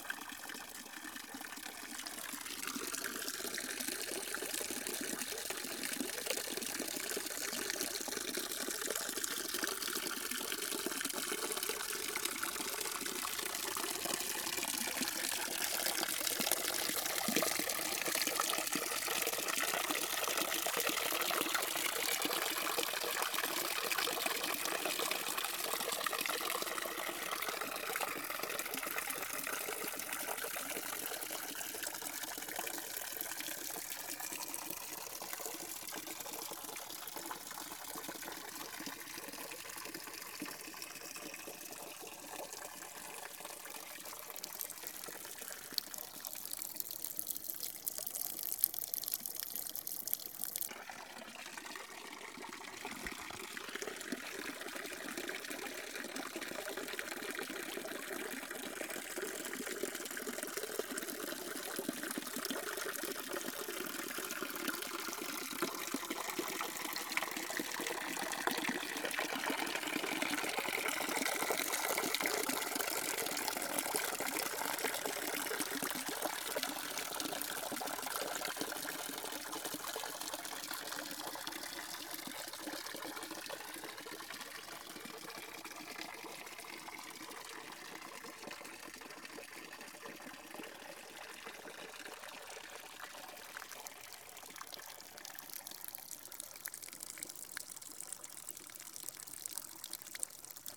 {"title": "Plan-d'Aups-Sainte-Baume, France - Source de Nans", "date": "2017-01-16 10:00:00", "description": "la source de nans sur le chemin des roys qui menne à la grotte de Marie magdeleine\nThe source of nans on the path of the roys which leads to the cave of Marie magdeleine", "latitude": "43.33", "longitude": "5.77", "altitude": "830", "timezone": "GMT+1"}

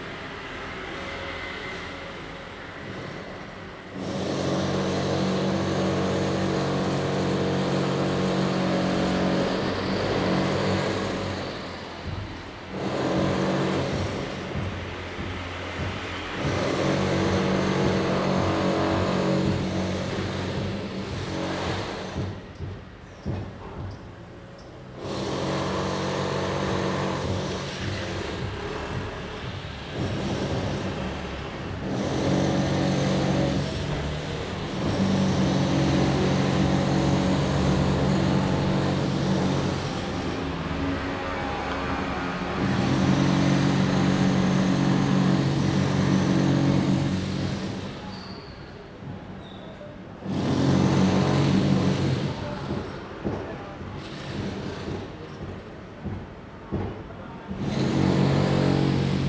{"title": "Daren St., 淡水區, New Taipei City - Construction noise", "date": "2017-01-05 13:31:00", "description": "Traffic sound, Construction noise\nZoom H2n MS+XY", "latitude": "25.18", "longitude": "121.44", "altitude": "45", "timezone": "GMT+1"}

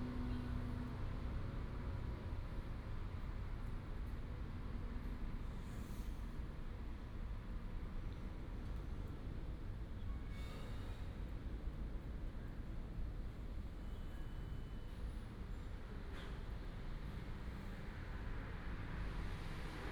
{"title": "新北市三芝區後厝里, Taiwan - Traffic Sound", "date": "2016-04-15 08:34:00", "description": "Traffic Sound, In front of the convenience store", "latitude": "25.25", "longitude": "121.47", "altitude": "10", "timezone": "Asia/Taipei"}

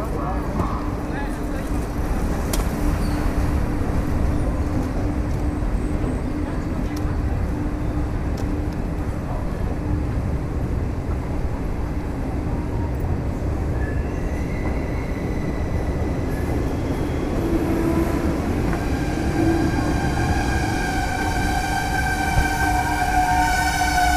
{"title": "Lausanne main train station - Train departure/arrival announcement", "date": "2011-06-09 16:40:00", "description": "Train departure/arrival annoucement, said by the pre-recorded official voice of the SBB (Swiss national railway company) in the French-speaking part of Switzerland.", "latitude": "46.52", "longitude": "6.63", "altitude": "448", "timezone": "Europe/Zurich"}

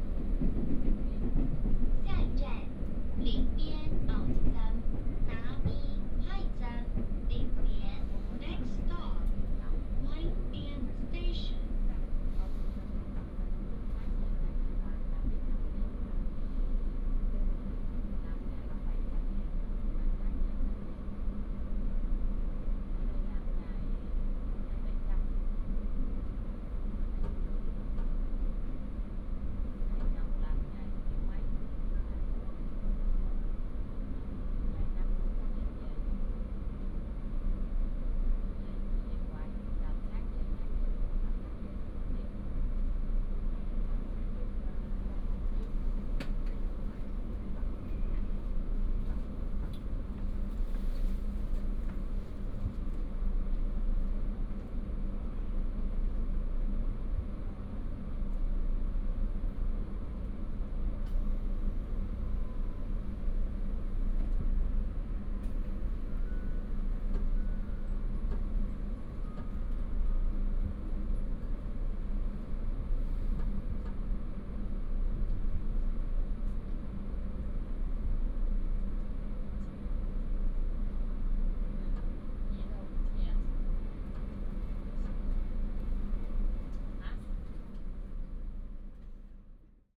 {"title": "枋寮鄉, Pingtung County - In the train compartment", "date": "2018-03-16 10:27:00", "description": "In the train compartment, Train news broadcast", "latitude": "22.35", "longitude": "120.61", "altitude": "11", "timezone": "Asia/Taipei"}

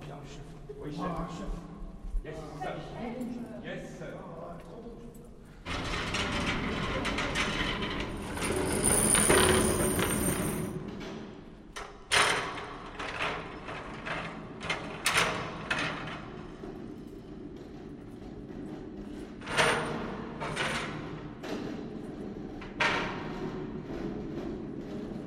{"title": "La Defense, montage d'une salle d'examen sur table", "latitude": "48.89", "longitude": "2.24", "altitude": "55", "timezone": "Europe/Berlin"}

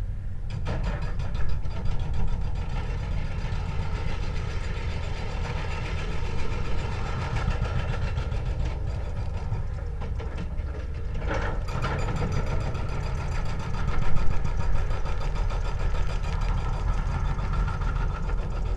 {"title": "The Cliffs Interpretation Centre, Triq Panoramika, Ħad-Dingli, Malta - excavator", "date": "2020-09-22 11:21:00", "description": "excavator with a hydraulic hammer attachment operating in the distance (roland r-07)", "latitude": "35.85", "longitude": "14.38", "altitude": "245", "timezone": "Europe/Malta"}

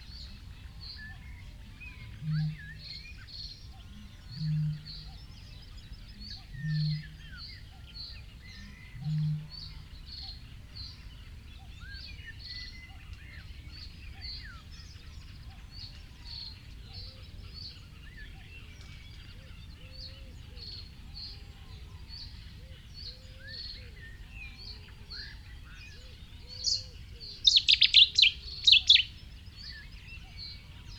{"title": "London Drove, United Kingdom - distant booming bittern soundscape ...", "date": "2019-04-29 04:30:00", "description": "distant booming bittern soundscape ... north hide ... lavalier mics clipped to sandwich box ... bird call ... song ... from ... reed warbler ... canada goose ... pheasant ... coot ... reed bunting ... mute swan ... carrion crow ... mallard ... cetti's warbler ... gadwall ... cuckoo ... tawny owl ... great tit ... 2:48 a mute swan tries to drown ..? a canada goose in an adjacent lake ... only surmising ... too dark to see ... traffic noise ...", "latitude": "51.20", "longitude": "-2.78", "altitude": "5", "timezone": "Europe/London"}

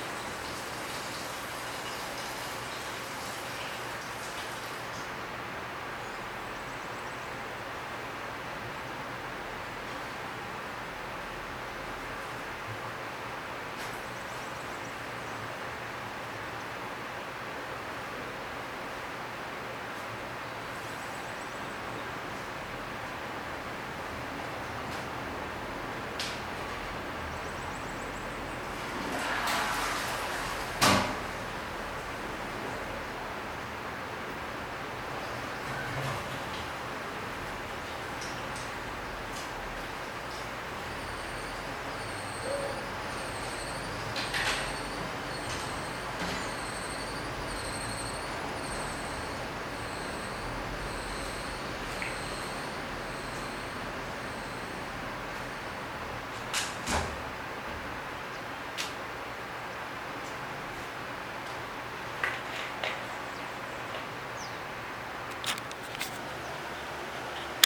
{"title": "Cachoeira, Bahia, Brazil - Sino da Igreja Matriz de Cachoeira", "date": "2014-03-21 07:19:00", "description": "Sexta-feira, sete da manhã... o sino da igreja toca.\nGravado com um sony icd px312.", "latitude": "-12.60", "longitude": "-38.96", "altitude": "11", "timezone": "America/Bahia"}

